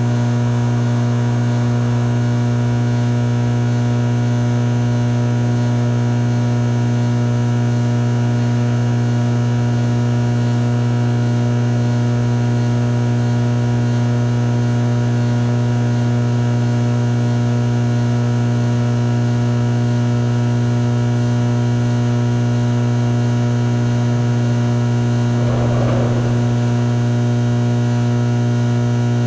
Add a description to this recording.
Ventilation System, Depew Place.